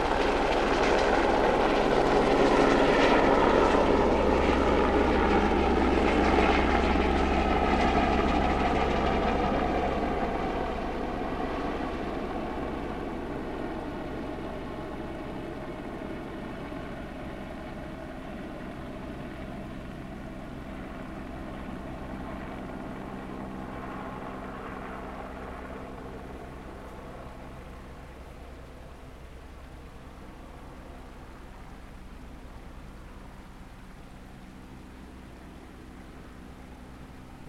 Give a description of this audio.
Bach und vor allem Helikopter mit Baumaterial auf der Alp, Wetter durchzogen, nicht so heiss wird es heute, Gewitter sind möglich.